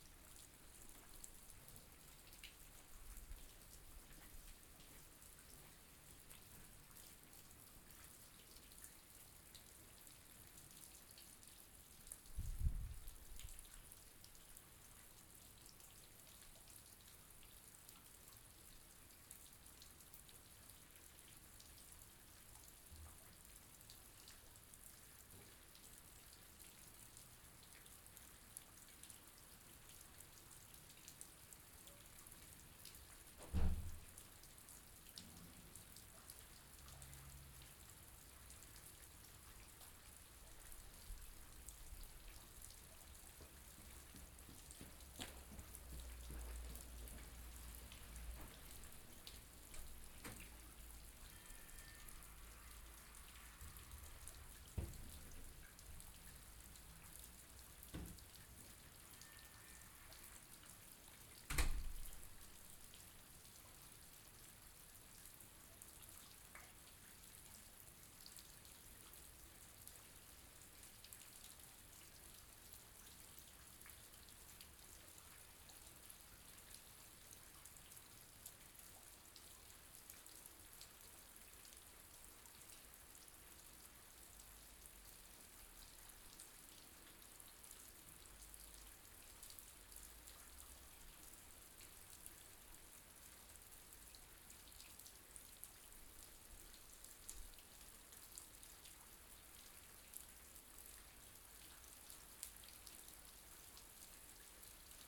Raining in Ourense (Spain). Recording made at a backyard on christmas eve.
Spain